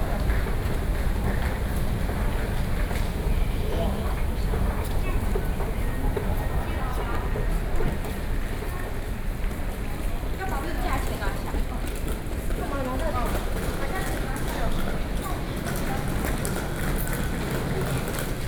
Taipei, Taiwan - Walking in the MRT stations
Songshan District, MRT Songshan Airport Station, 松山機場